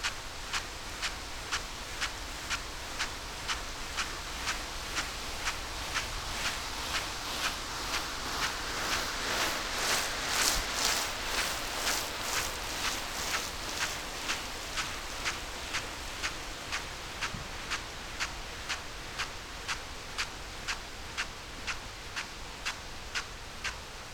potato irrigation ... bauer rainstar e 41 to irrigation sprinkler ... xlr sass on tripod to zoom h5 ... on the outside of the sprinkler's arc as it hits the plants and trackway with its plume of water ... no idea why find this so fascinating ... must be old age and stupidity in abundence ...
North Yorkshire, England, United Kingdom, 16 July 2022, 06:20